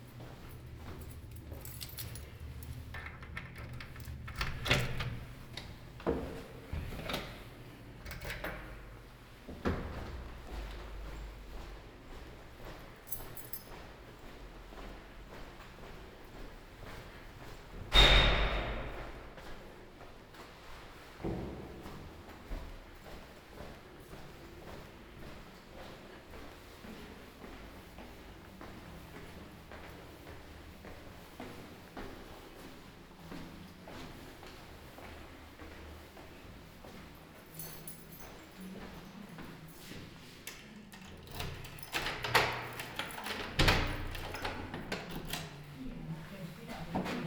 {
  "title": "Ascolto il tuo cuore, città. I listen to your heart, city. Several chapters **SCROLL DOWN FOR ALL RECORDINGS** - “Shopping in the re-open market at the time of covid19” Soundwalk",
  "date": "2020-03-26 11:25:00",
  "description": "“Shopping in the re-open market at the time of covid19” Soundwalk\nChapter XXIII of Ascolto il tuo cuore, città. I listen to your heart, city.\nThursday March 26 2020. Shopping in the re-open air square market at Piazza Madama Cristina, district of San Salvario, Turin, sixteen days after emergency disposition due to the epidemic of COVID19.\nStart at 11:25 a.m., end at h. 00:01 p.m. duration of recording 36’11”\nThe entire path is associated with a synchronized GPS track recorded in the (kml, gpx, kmz) files downloadable here:",
  "latitude": "45.06",
  "longitude": "7.68",
  "altitude": "246",
  "timezone": "Europe/Rome"
}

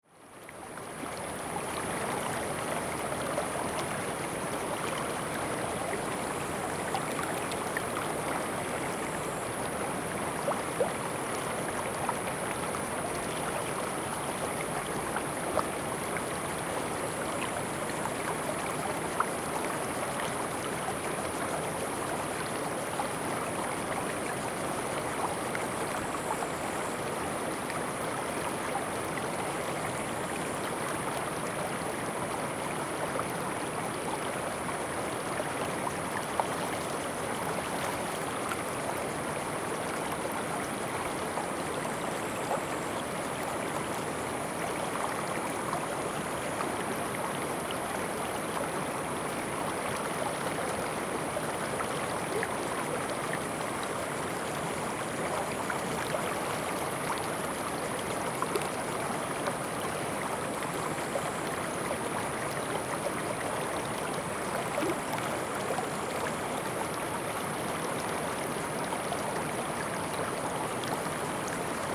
{
  "title": "白鮑溪, Shoufeng Township - The sound of water streams",
  "date": "2014-08-28 11:53:00",
  "description": "The sound of water streams, Very hot weather\nZoom H2n MS+ XY",
  "latitude": "23.89",
  "longitude": "121.51",
  "altitude": "74",
  "timezone": "Asia/Taipei"
}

